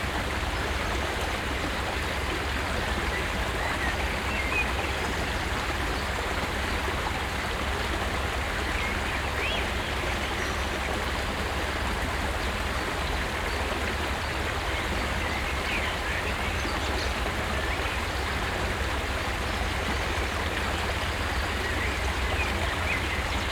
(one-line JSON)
{"title": "Winkhauser Tal, Deutschland - essen, winkhauser tal, small stream at bridge", "date": "2014-05-14 09:20:00", "description": "Auf einer Brücke über einen kleinen Bach im Naturschutzgebiet Winkhauser Tal. Der Klang des Wassers und der Vögel an einem sonnigem, leicht windigem Fühlingsmorgen.\nAt a bridge across a small stream at the nature protection zone winkhauser valley. The sound of the water and the birds at a mild windy, sunny spring morning.\nProjekt - Stadtklang//: Hörorte - topographic field recordings and social ambiences", "latitude": "51.45", "longitude": "6.94", "altitude": "75", "timezone": "Europe/Berlin"}